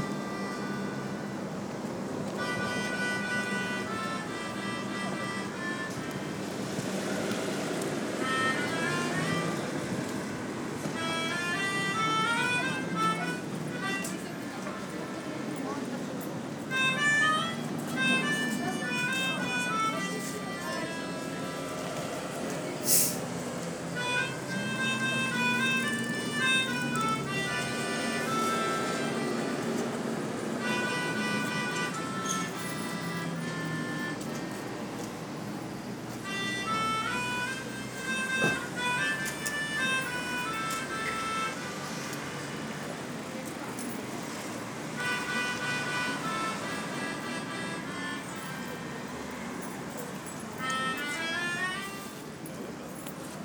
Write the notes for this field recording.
hypernova, harmonica, liptovsky mikulas, supermarket, parking